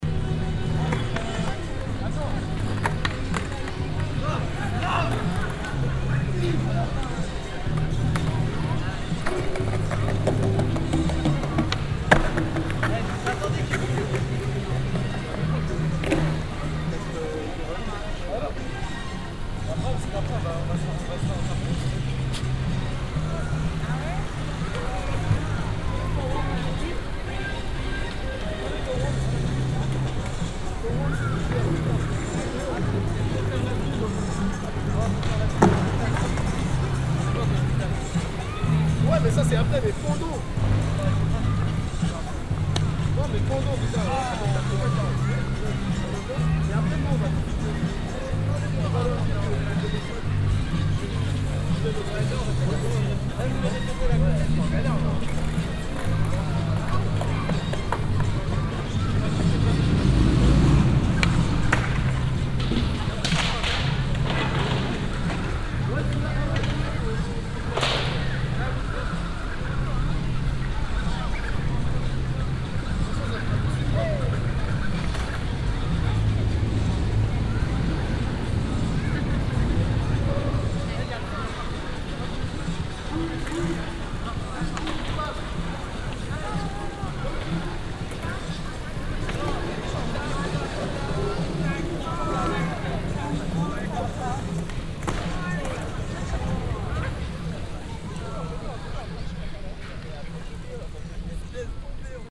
paris, rue brisemiche, hip hop and skater place
some hip hop dancer in front of a ghetto blaster disco machine. a single drunken skater crossing the place. In the background the fountain and a watching crowd.
international cityscapes - social ambiences and topographic field recordings